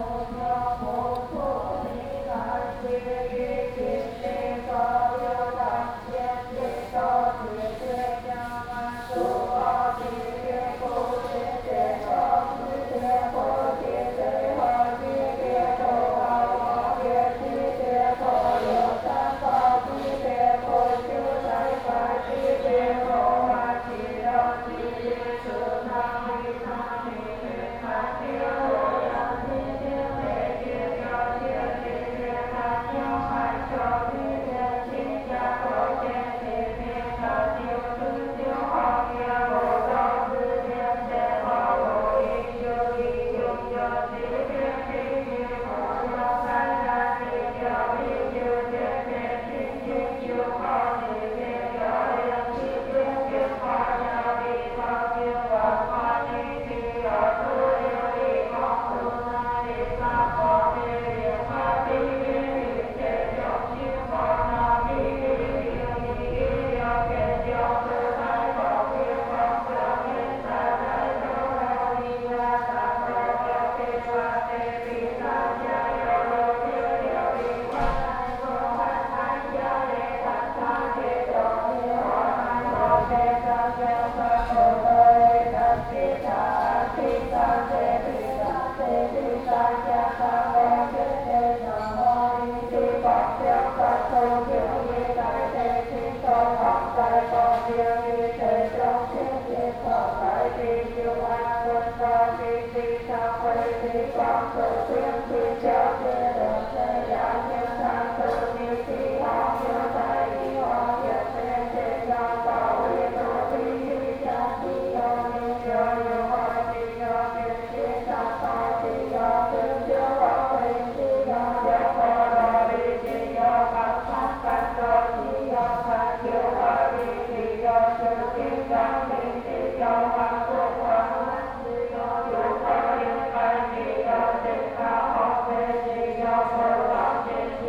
奠濟宮, Ren’ai Dist., Keelung City - Walking inside the temple
Walking inside the temple, rain, Thunderstorms
Ren’ai District, Keelung City, Taiwan, 18 July 2016